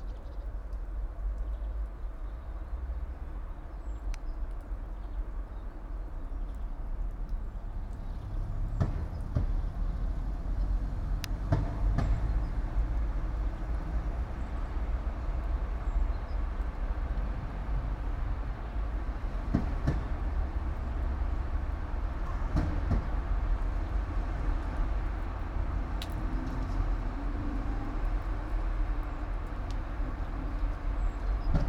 all the mornings of the ... - jan 28 2013 mon
Maribor, Slovenia, 28 January 2013